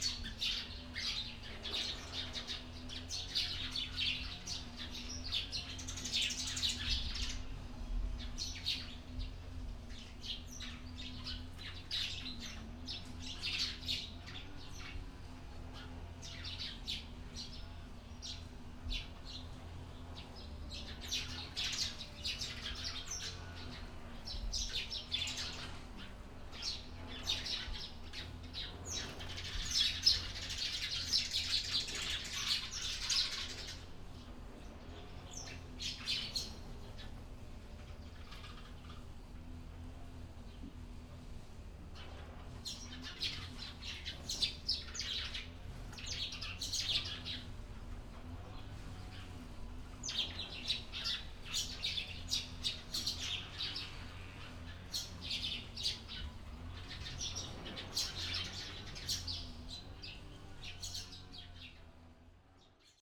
福安宮, Baisha Township - In front of the temple
In front of the temple, Birds singing, Mechanical sound in the distance
Zoom H6 + Rode NT4
Penghu County, Baisha Township, 22 October, ~10:00